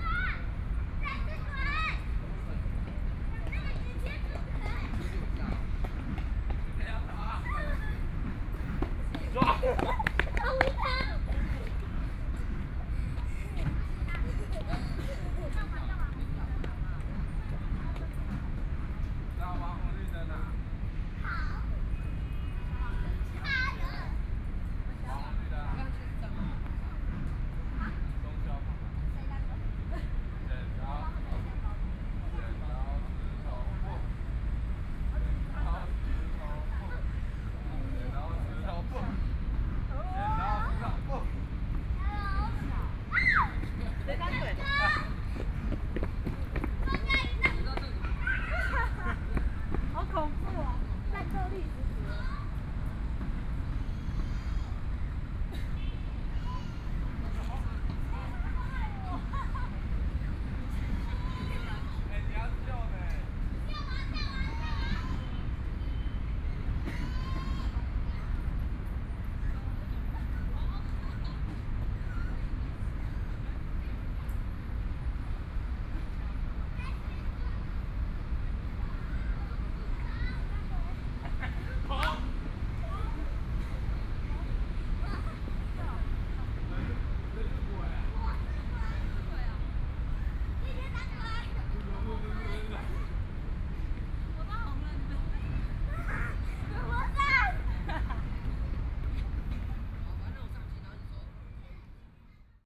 The park at night, Children's play area, Traffic Sound, Environmental sounds
Please turn up the volume a little
Binaural recordings, Sony PCM D100 + Soundman OKM II